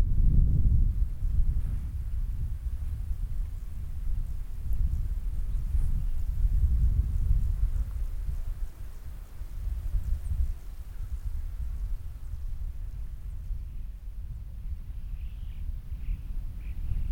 Ploskos, Konitsa, Greece - Ploskos Soundscape
This is a collage of sounds all recorded yesterday on an Olympus LS 14 as we walked from the Katfygio (Refuge Hut) to Ploskos at 2397m in the Tymfi range of mountains. There was a fair breeze and glorious sunshine. We made our way through the limestone pavements and rock rivers, sounding like crockery. We could hear Rock Pippits, Choughs (please correct me if wrong)and in the distance some chamois descending from the climbers peak (the real peak is inaccessible to all but the insane!